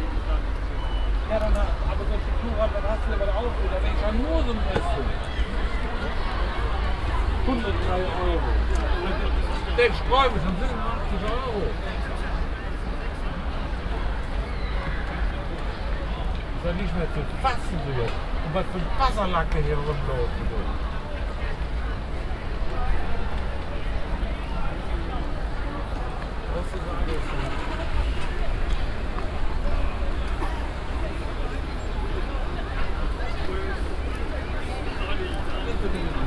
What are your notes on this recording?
konversationen wartender taxifahrer an kölns touristenmeile dom - zufalls aufnahmen an wechselnden tagen, soundmap nrw: social ambiences/ listen to the people - in & outdoor nearfield recordings